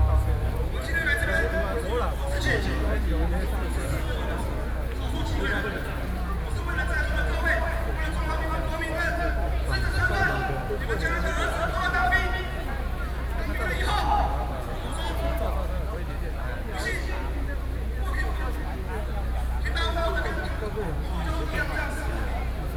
Xinyi Rd - Protest
Protest against the government, A noncommissioned officer's death, Sony PCM D50 + Soundman OKM II
3 August 2013, 20:38